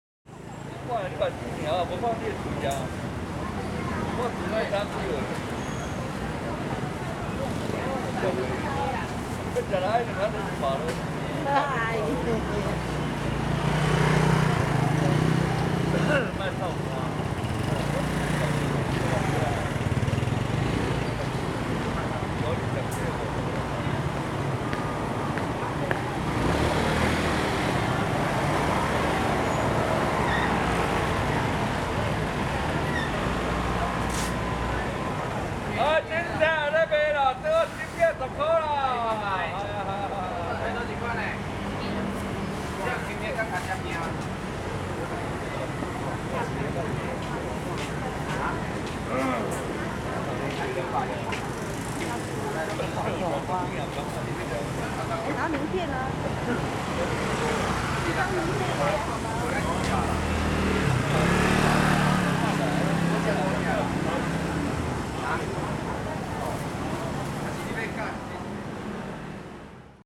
Changshou St., Sanchong Dist., New Taipei City - In the market
in the traditional market
Sony Hi-MD MZ-RH1 +Sony ECM-MS907